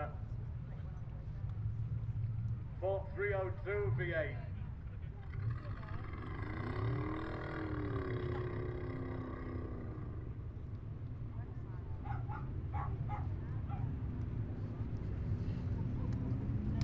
race the waves ... beach straight line racing ... motorbikes ... cars ... vans ... flat beds ... americana ... xlr sass on tripod to zoom h5 ...